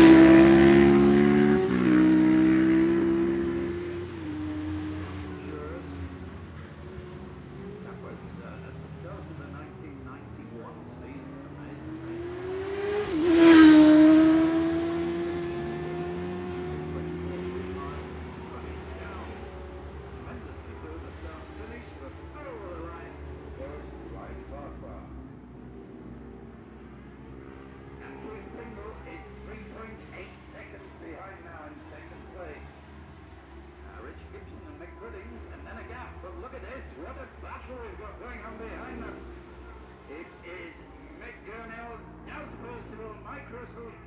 Jacksons Ln, Scarborough, UK - Barry Sheene Classic Races 2009 ...
Barry Sheene Classic Races 2009 ... one point stereo mic to minidisk ... 600 bikes ... in line fours and twins ...
23 May 2009, 13:30